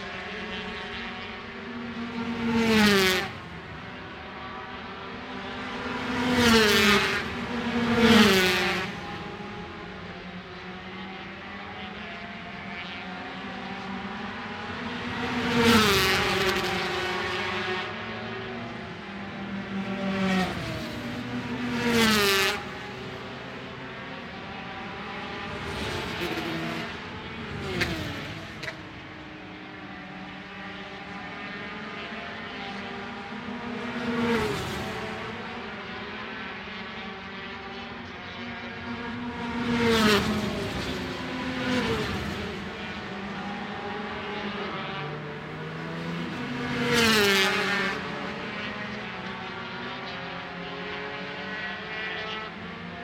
British Superbikes 2005 ... 125 free practice two ... one point stereo mic to minidisk ...
Longfield, UK, 26 March 2005